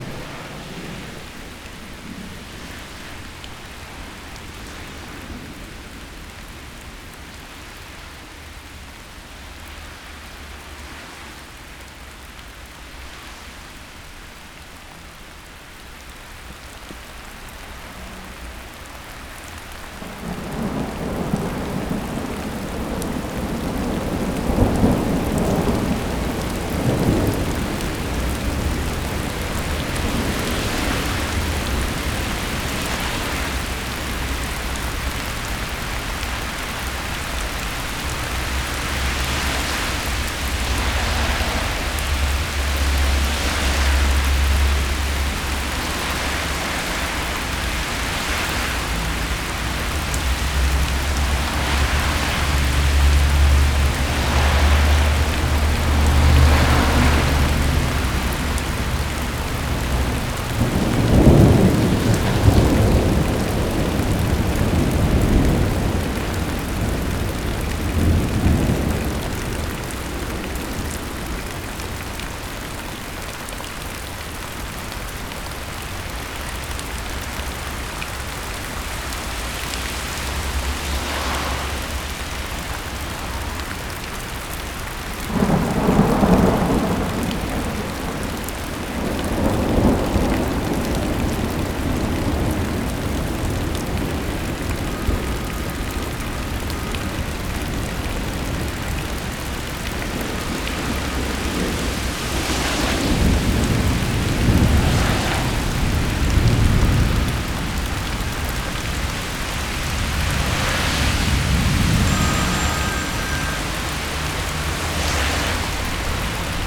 Innstraße, Innsbruck, Österreich - Rain and Thunder under the trees
Rain thunder under, vogelweide, waltherpark, st. Nikolaus, mariahilf, innsbruck, stadtpotentiale 2017, bird lab, mapping waltherpark realities, kulturverein vogelweide, telefon klingelt
Innsbruck, Austria, June 2017